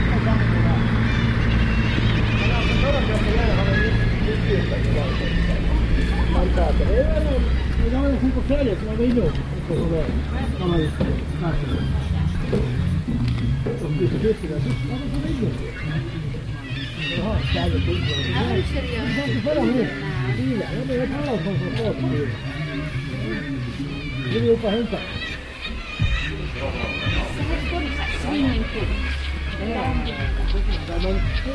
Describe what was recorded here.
Pyramiden is a russian mining town which once had a population of over 1,000 inhabitants, [1] but was abandoned on 10 January 1998 by its owner, the state-owned Russian company Arktikugol Trust. It is now a ghost town. Within the buildings, things remain largely as they were when the settlement was abandoned in a hurry. The place is about to re-open as a turist attraction. I went on a boat trip to Pyramiden and because the danger of polar bears, I had to stick to the group and there were no time for recordings. These recordings are from outside the Wodka bar at the Hotel, where thousends of Kittiwakes had sqatted one of the abandonned buildings.